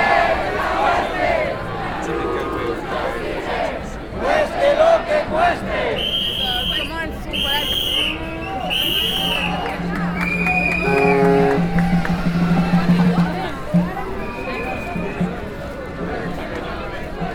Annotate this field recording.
Occupy Brussels - Boulevard Simon Bolivar